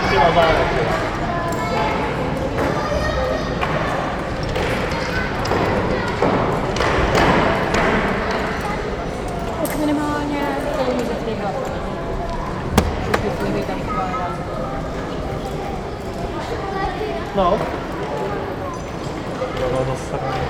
Ocelářská Praha, Česká republika - lezecká stěna BigWall
inside the climbing center
Praha-Praha, Czech Republic, 9 October 2014, 16:15